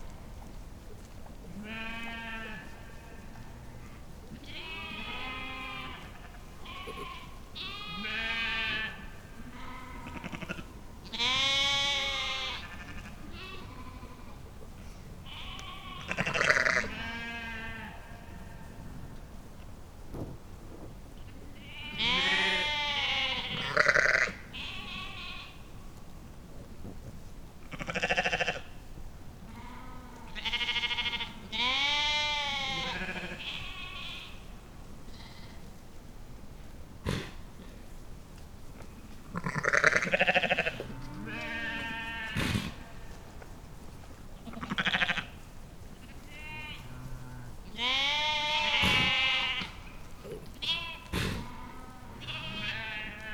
Beselich, Niedertiefenbach - sheep-run at night
meadow with sheep near forest, night ambience
(Sony PCM D50)
Germany, July 2012